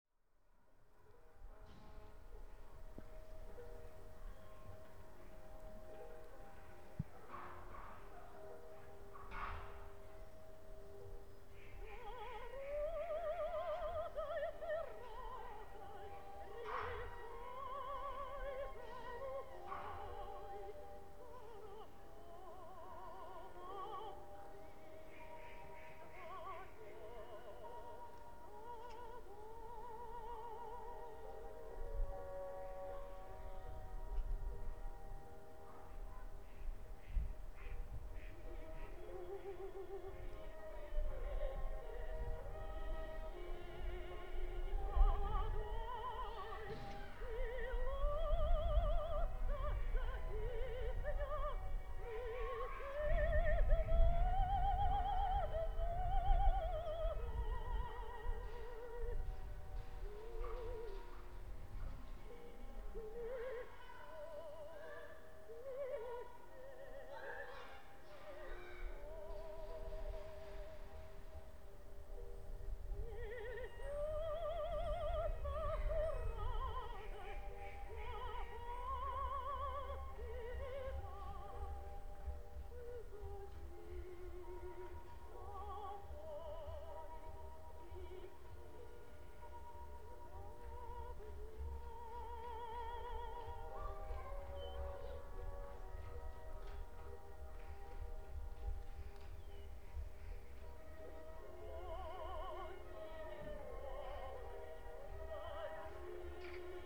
Gruta, Lithuania
in the park of soviet sculptures